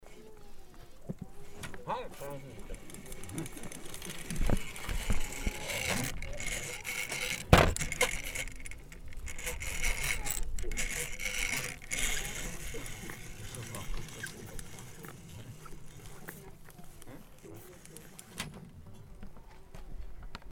Restialp, Beiz mit Schützenübungsband
Übungsblatt zum Heranholen in der Alpbeiz in der Restialp, kein Schützen, jedoch Wanderer und .innen.
Ferden, Schweiz, July 2011